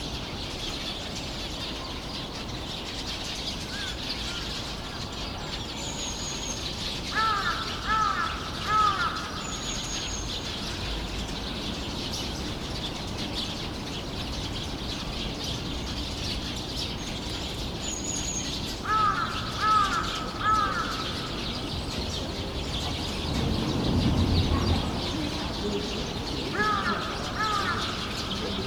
대한민국 서울특별시 서초구 잠원동 85 - Sinbanpo Apt, A Flock of Birds
Sinbanpo Apt, a flock of birds making noise
신반포아파트, 나무 위의 새떼들
12 October 2019, 20:30